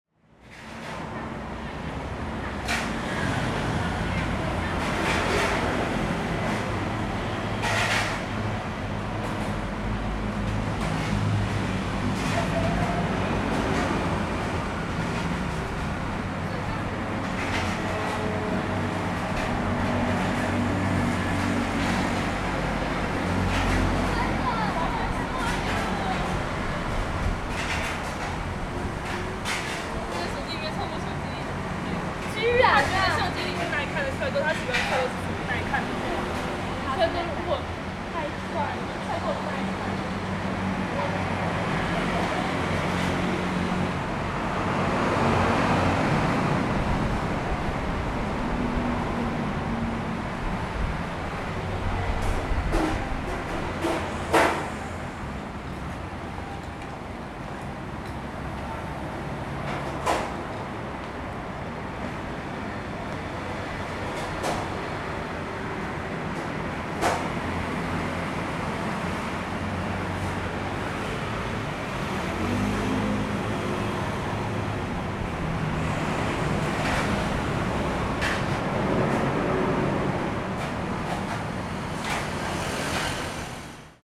Kaohsiung, Taiwan - in the street
Restaurant are cleaning and washing dishes, Traffic Noise, Sony Hi-MD MZ-RH1, Sony ECM-MS907